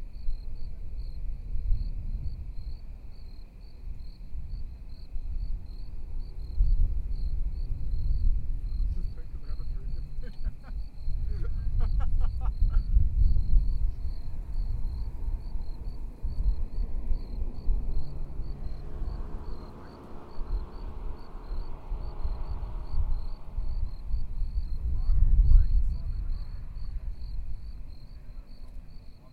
The Pass
A popular hang out spot for locals within the area.
Santa Barbara County, California, United States, 28 October 2019, 05:57